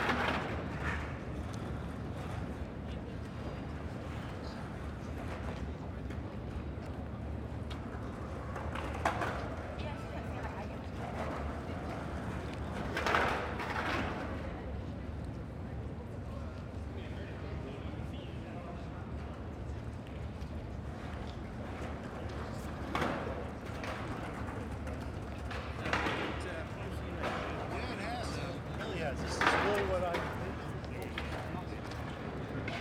Thames riverside - Skaters and passers-by. In front of, and below the Royal National Theatre.
[Hi-MD-recorder Sony MZ-NH900, Beyerdynamic MCE 82]
14 February 2013, 18:33